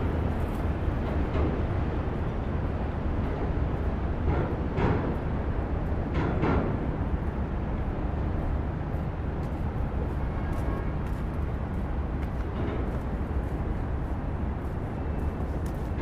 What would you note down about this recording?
Under the Williamsburg Bridge. NYC, zoom h6